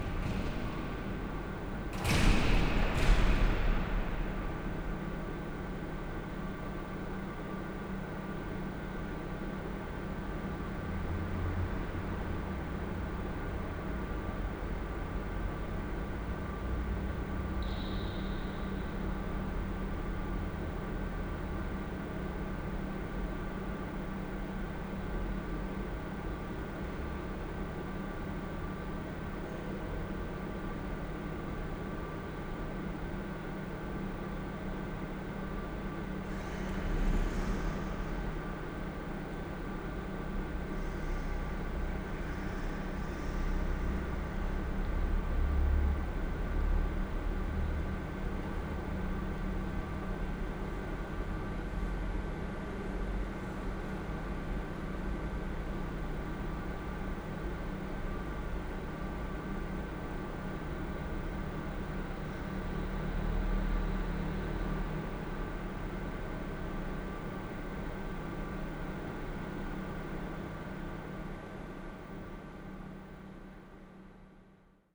Trieste Centrale, main station - early morning hall ambience
early morning ambience in the great hall, at Trieste main station
(SD702, DPA4060)